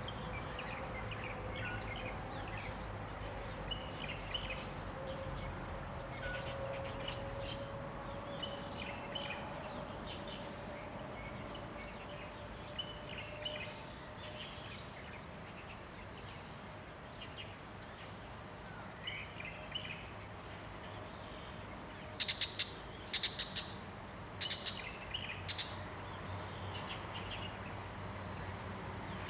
桃米里, Puli Township, Nantou County - Birds singing
In the stream, Traffic Sound, Birds singing
Zoom H2n MS+XY
Puli Township, Nantou County, Taiwan, October 2015